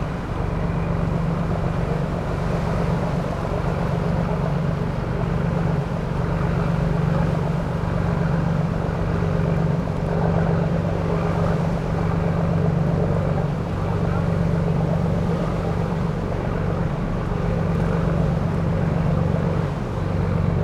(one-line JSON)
{"title": "lipari castle - above harbour", "date": "2009-10-19 12:10:00", "description": "lipari harbour, 50m above, near old castle", "latitude": "38.47", "longitude": "14.96", "altitude": "6", "timezone": "Europe/Berlin"}